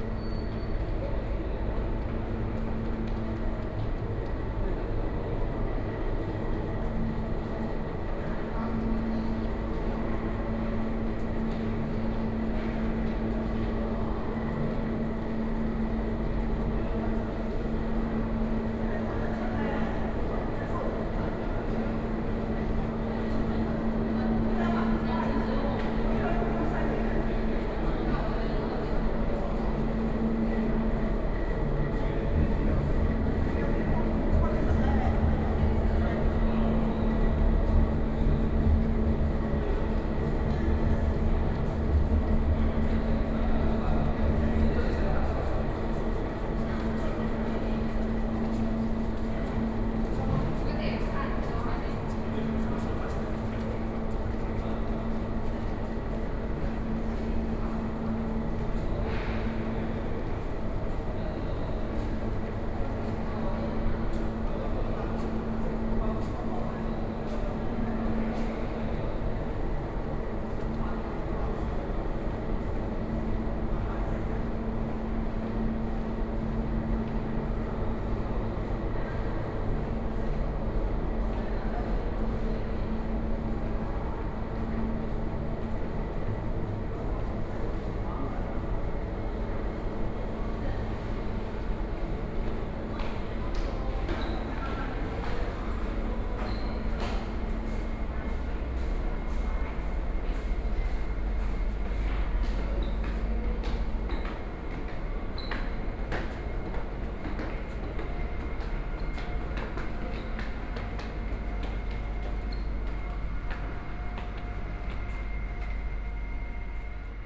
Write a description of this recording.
Walking in underground passage, From the square in front of the station to the area behind the station, Zoom H4n+ Soundman OKM II